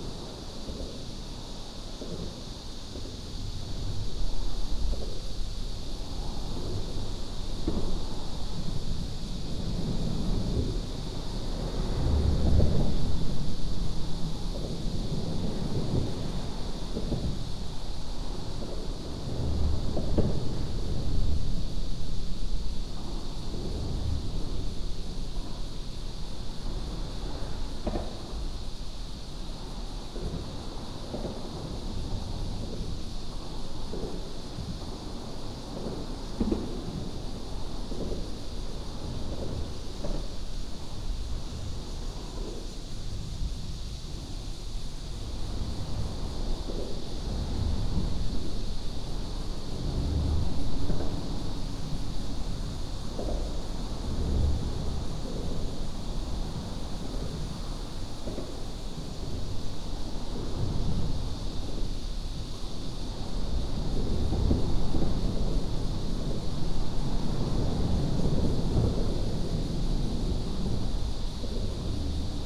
Zhongli Dist., Taoyuan City - Under the highway
Under the highway, Cicada cry, Traffic sound